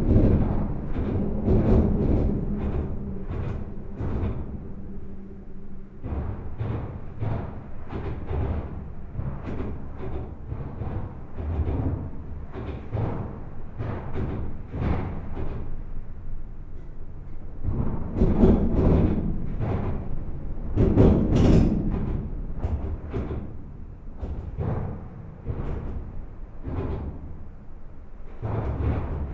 This viaduct is one of the more important road equipment in all Belgium. It's an enormous metallic viaduct. All internal structure is hollowed. This recording is made from the outside, just below the caisson.